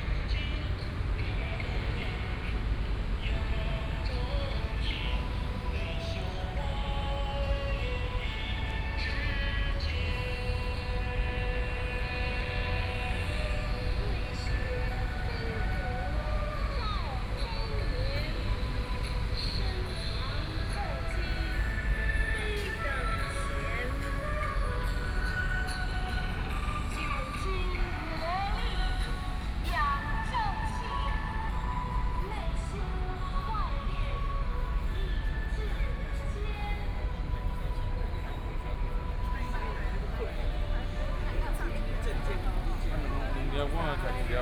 榮星公園, Taipei City - walking in the Park
Walking through the park in the morning, Traffic Sound, Environmental sounds
Binaural recordings
Taipei City, Taiwan, 27 February, ~07:00